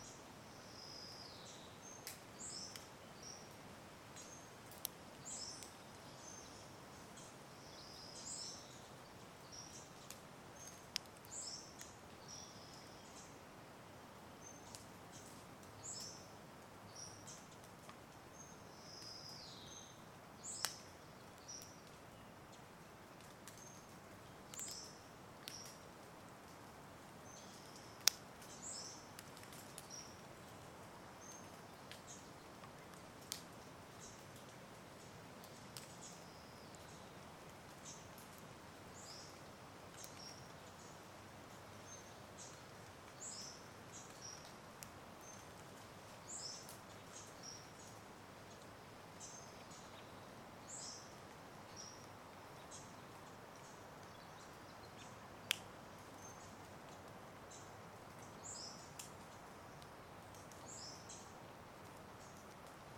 Olema Valley forest ambience
dripping tree sounds in the quietude of Olema Valley near Point Reyes